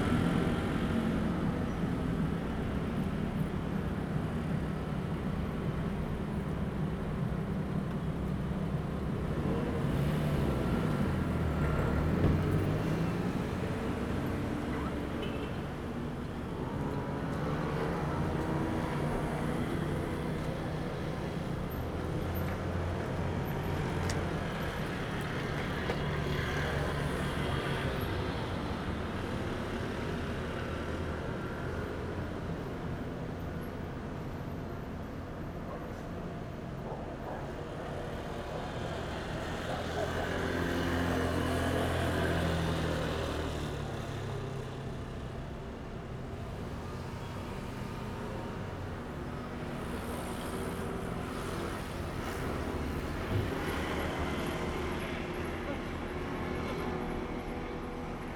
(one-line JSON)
{"title": "Hankou St., Chenggong Township - In front of the convenience store", "date": "2014-09-08 09:28:00", "description": "In front of the convenience store, Traffic Sound\nZoom H2n MS +XY", "latitude": "23.10", "longitude": "121.38", "altitude": "37", "timezone": "Asia/Taipei"}